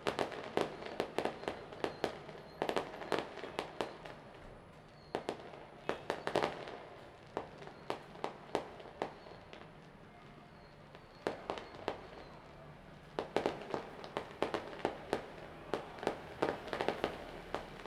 Daren St., Tamsui District - Firecrackers and Fireworks sound
Firecrackers and Fireworks sound, Traditional festival parade
Zoom H2n MS+XY